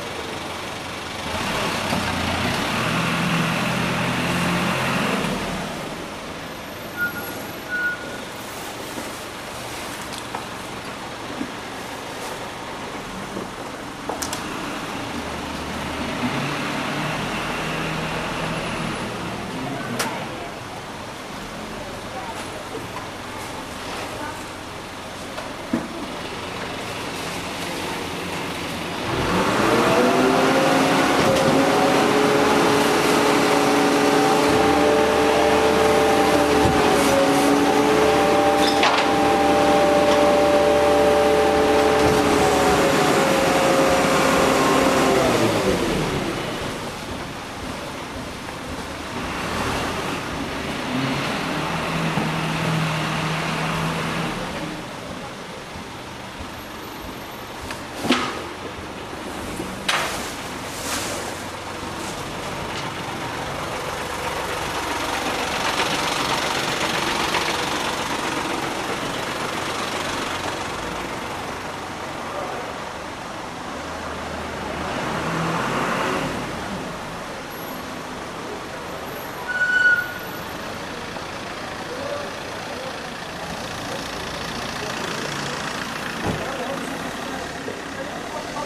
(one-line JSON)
{"title": "Fullmoon Nachtspaziergang Part VIII", "date": "2010-10-23 22:36:00", "description": "Fullmoon on Istanbul, meeting the garbagemen on their duty.", "latitude": "41.06", "longitude": "28.99", "altitude": "126", "timezone": "Europe/Istanbul"}